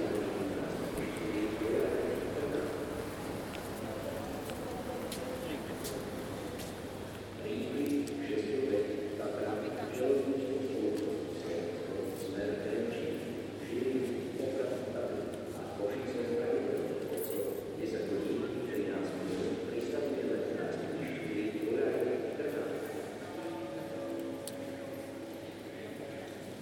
Bratislava hl.st, Námestie Franza Liszta, Bratislava-Staré Mesto, Slovakia - Pouliční hudebník v hale hlavného nádraží
Další nádražní ambient po dvou letech.
Bratislava, Slovensko, 16 February 2022, ~16:00